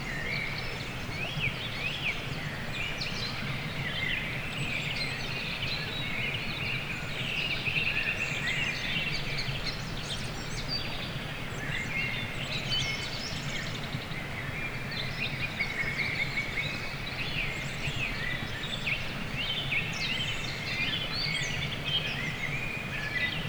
Dawn chorus at Trsteník valley in National Park Muránska Planina.